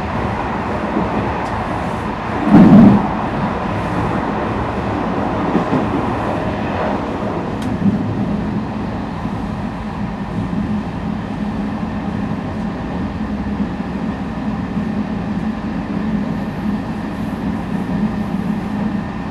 Nantes-Lyon by train
Minidisc recording from 2000, january 1st.